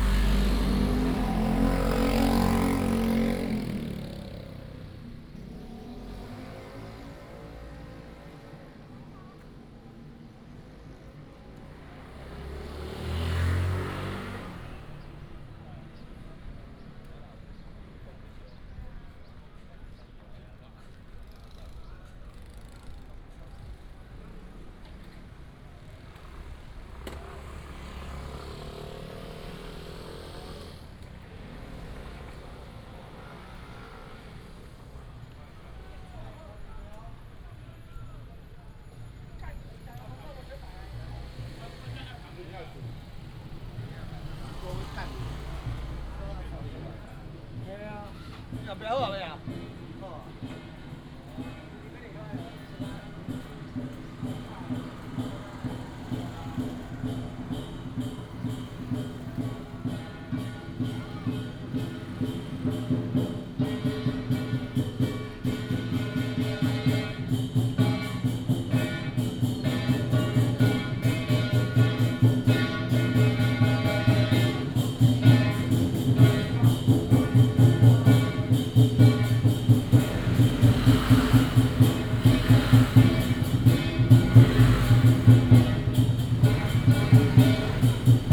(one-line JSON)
{"title": "Shuidui St., Tamsui Dist. - Walking in a small alley", "date": "2017-03-23 14:25:00", "description": "temple fair, Walking in a small alley", "latitude": "25.18", "longitude": "121.44", "altitude": "54", "timezone": "Asia/Taipei"}